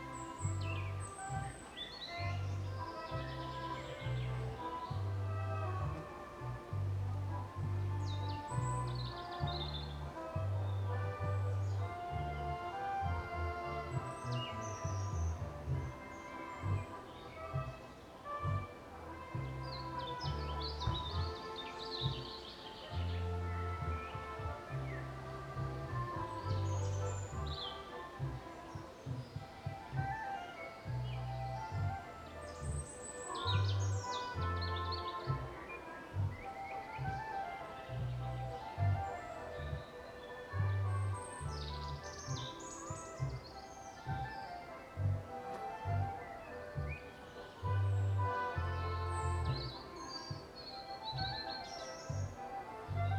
I just went outside the yard, nearer to forest